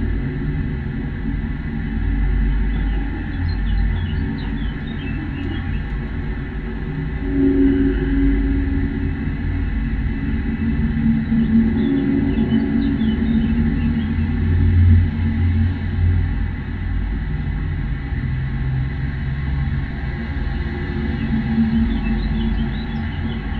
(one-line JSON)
{"title": "Praha, Bělohorská - unused trampole", "date": "2011-06-21 16:55:00", "description": "mic at different position", "latitude": "50.09", "longitude": "14.38", "altitude": "300", "timezone": "Europe/Prague"}